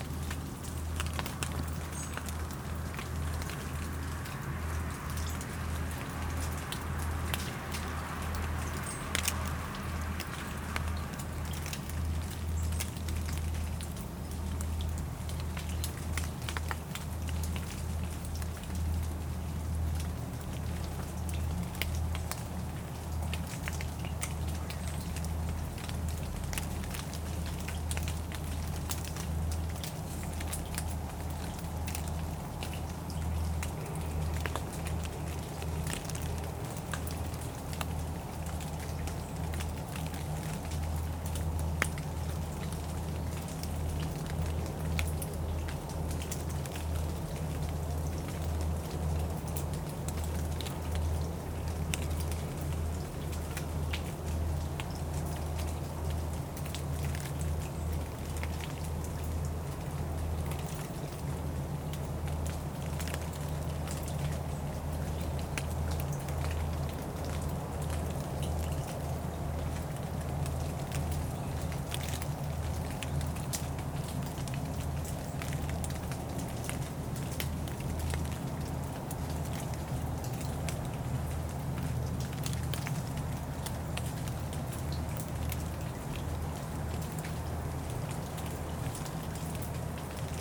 Porte-Joie, France - Soft rain
A soft rain is falling onto the trees, on the quiet Seine river bank.
September 2016